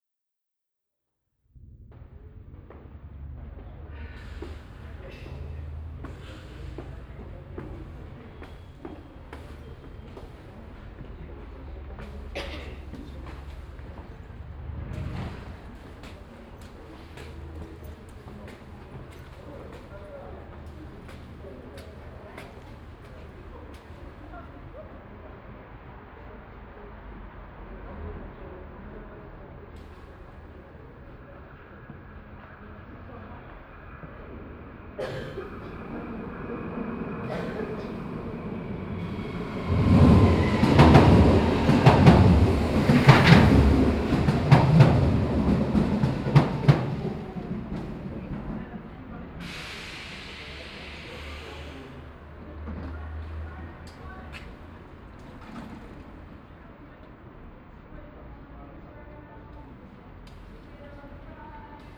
Am Bahnhof Essen Borbeck. Der Klang von Schritten auf der Treppe zum Bahnsteig. Ein Zug kommt an - ein Mädchen singt, Stimmen und Schritte.
At the station Essen Borbeck. The sound of steps at the stairways to the platform. A train arrives - a girlcomes singing, steps and voices.
Projekt - Stadtklang//: Hörorte - topographic field recordings and social ambiences